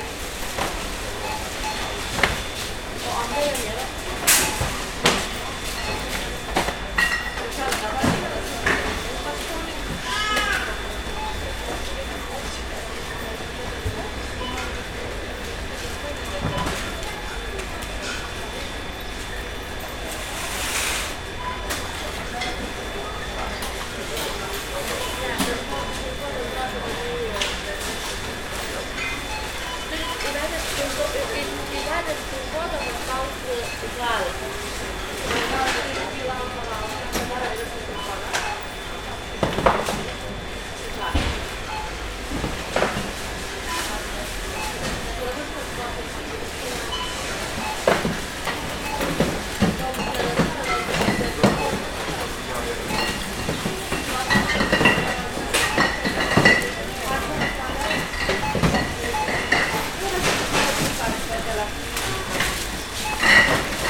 MAXIMA, Gėlių g., Ringaudai, Lithuania - Maxima mall interior
Recording of "Maxima" mall interior. Busy day with a lot of people shopping. Recorded with ZOOM H5.